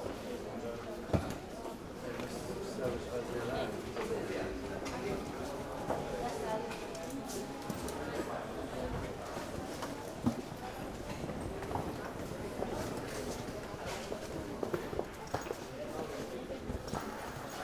Lübeck airport, check-in hall - waiting line
passengers of three different flights waiting in line for their check-in, talking, moving about their luggage, quieting down their kids.
Lübeck Airport (LBC), Lübeck, Germany, 24 September, 09:28